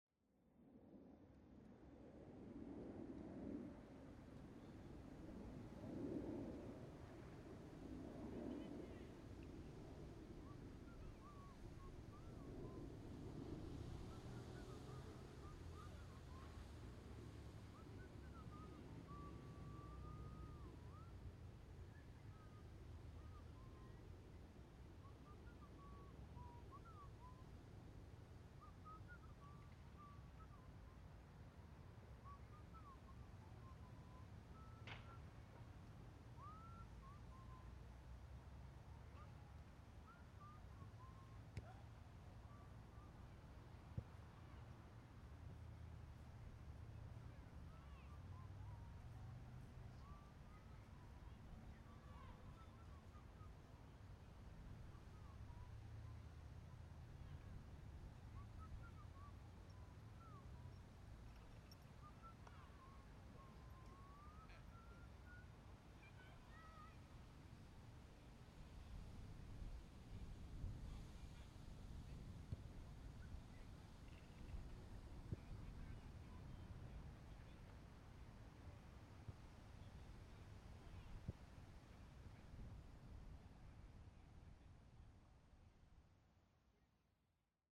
2 Brockwell Park - 117 Norwood Road Dulwich, London SE24 9AE
While I was recording a Wild Track for a film I was involved, a guy crossed my sound field and without caring at all he got on whistling a song he was playing back on its mp3 player